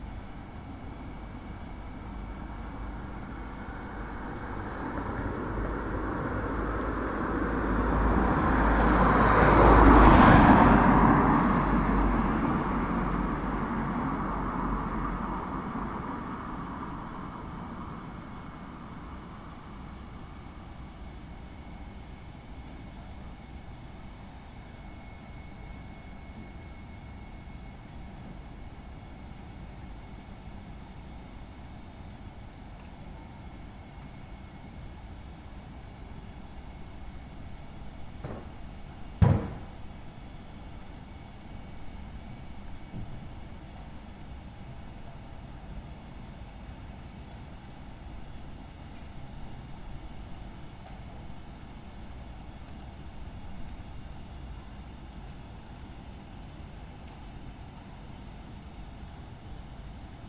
Meljska cesta, Maribor, Slovenia - corners for one minute
one minut for this corner: Meljska cesta 66